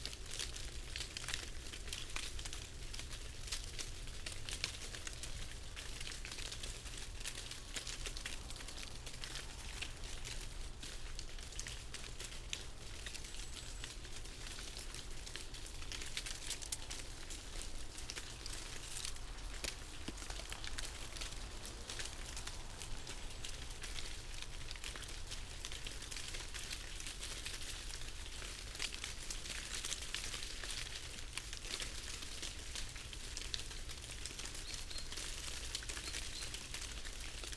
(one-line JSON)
{"title": "Green Ln, Malton, UK - falling sycamore leaves ...", "date": "2019-11-19 09:34:00", "description": "falling sycamore leaves ... parabolic ... very cold and still morning ... the dessicated leaves falling in almost a torrent ... bird calls ... pheasant ... great tit ... blue tit ... blackbird ... chaffinch ... crow ... background noise ...", "latitude": "54.12", "longitude": "-0.57", "altitude": "97", "timezone": "Europe/London"}